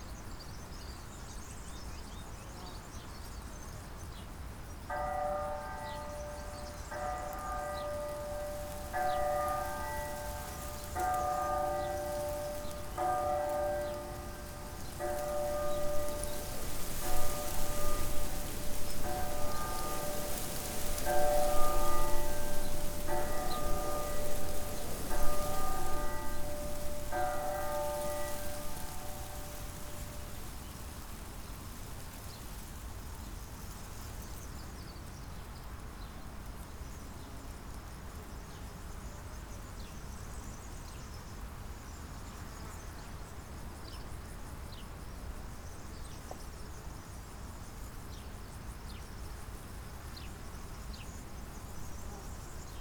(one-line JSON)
{"title": "Povoa Das Leiras, church bells from Candal - church bells from Candal", "date": "2012-07-19 12:00:00", "latitude": "40.85", "longitude": "-8.17", "altitude": "715", "timezone": "Europe/Lisbon"}